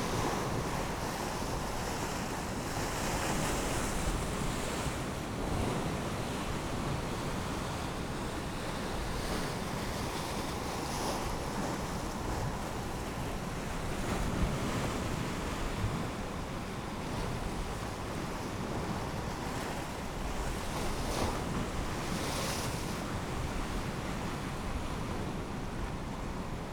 {
  "title": "East Lighthouse, Battery Parade, Whitby, UK - east pier falling tide ...",
  "date": "2021-05-27 10:00:00",
  "description": "east pier falling tide ... dpa 4060s clipped to bag to zoom h5 ...",
  "latitude": "54.49",
  "longitude": "-0.61",
  "timezone": "Europe/London"
}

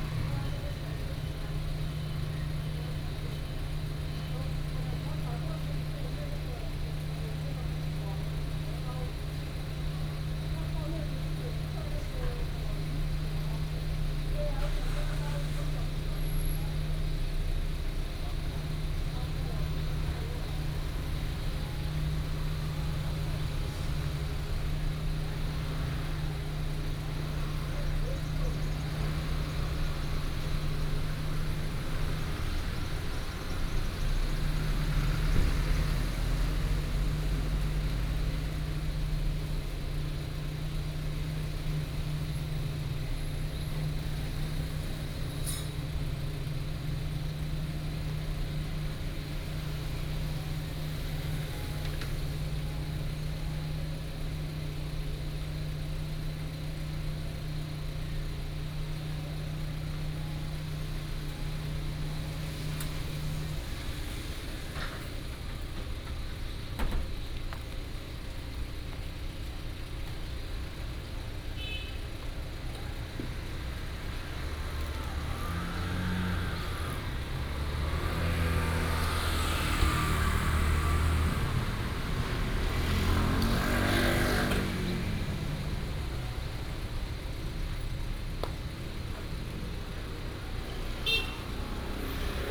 {
  "title": "太麻里街南里巷, Taitung County - Morning in the village",
  "date": "2018-03-15 06:19:00",
  "description": "Morning in the village center of small village, Traffic sound",
  "latitude": "22.61",
  "longitude": "121.01",
  "altitude": "17",
  "timezone": "Asia/Taipei"
}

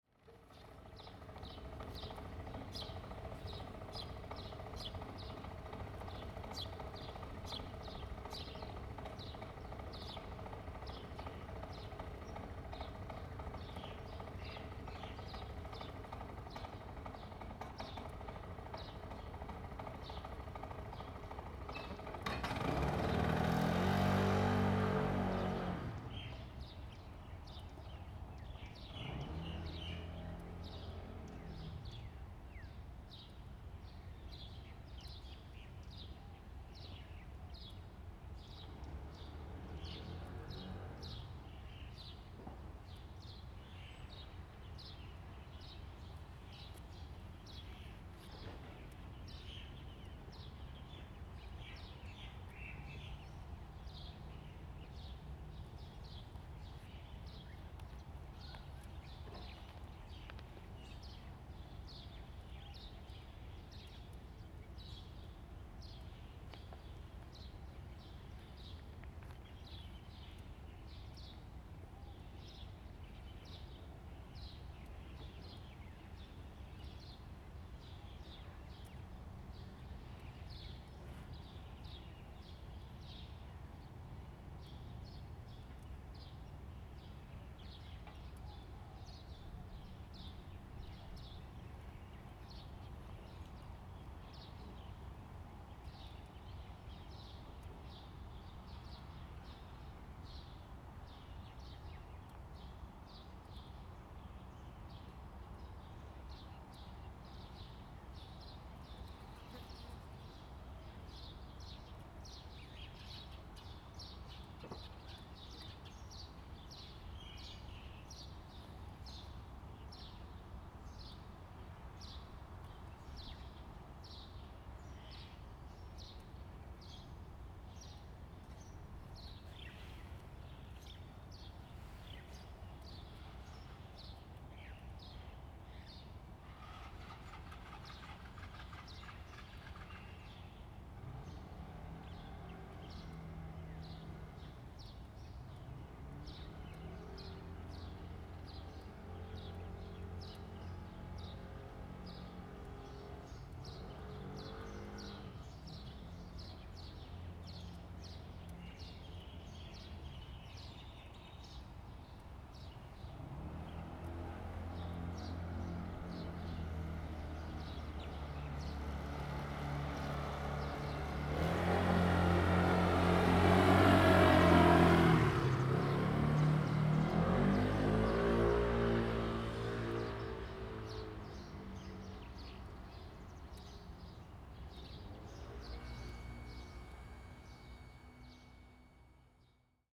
{
  "title": "埔頭, Lieyu Township - Birds singing",
  "date": "2014-11-04 09:16:00",
  "description": "Birds singing, Small village\nZoom H2n MS +XY",
  "latitude": "24.45",
  "longitude": "118.25",
  "altitude": "20",
  "timezone": "Asia/Shanghai"
}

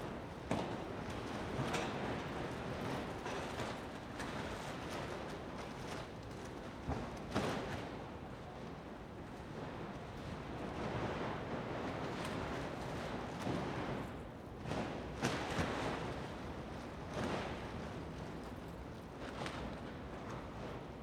{
  "title": "Gotenburger Str., Berlin, Deutschland - Gotenburger Strasse, Berlin - Tarp on a scaffold flapping in the wind",
  "date": "2017-03-18 15:04:00",
  "description": "A pretty windy day in Berlin. The tarp covering a very large scaffold at the school building is flapping in the wind. Some parts are already loose, there is also a small plastic bag blowing up and flattering in the wind. From time to time there is also deep whistling sound: the scaffolding tubes are blown by the wind.\n[Beyerdynamic MCE 82, Sony PCM-D100]",
  "latitude": "52.56",
  "longitude": "13.38",
  "altitude": "43",
  "timezone": "Europe/Berlin"
}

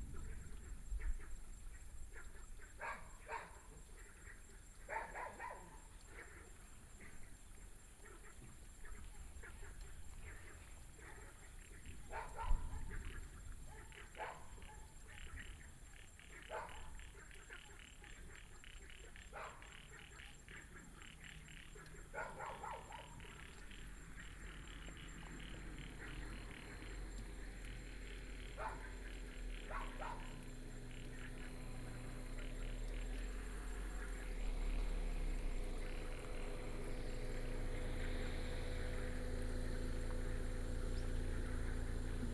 {
  "title": "都蘭村, Donghe Township - Frogs",
  "date": "2014-09-06 18:19:00",
  "description": "Frogs sound, Dogs barking, Birdsong, Small village",
  "latitude": "22.88",
  "longitude": "121.22",
  "altitude": "136",
  "timezone": "Asia/Taipei"
}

